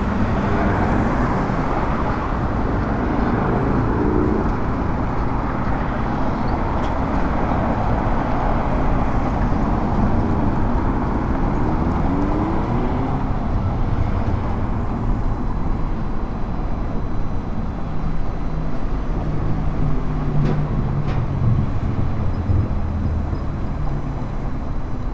Traffic on Las Torres avenue during COVID-19 in phase 2 in León, Guanajuato. Mexico. In front of the Plaza Mayor shopping center.
This is a busy avenue. Although in this quarantine the difference in vehicular flow on this road is very noticeable.
(I stopped to record this while I was going to buy my mouth covers.)
I made this recording on April 14th, 2020, at 5:35 p.m.
I used a Tascam DR-05X with its built-in microphones and a Tascam WS-11 windshield.
Original Recording:
Type: Stereo
Esta es una avenida con mucho tráfico. Aunque en esta cuarentena sí se nota mucho la diferencia de flujo vehicular en esta vía.
(Me detuve a grabar esto mientras iba a comprar mis cubrebocas.)
Esta grabación la hice el 14 de abril 2020 a las 17:35 horas.